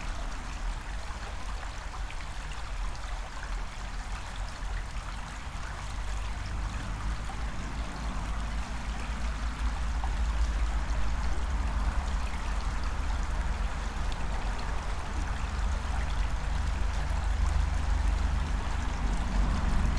Utena, Lithuania, under the pedestrians bridge
listening under oedestrians bridge. the road is near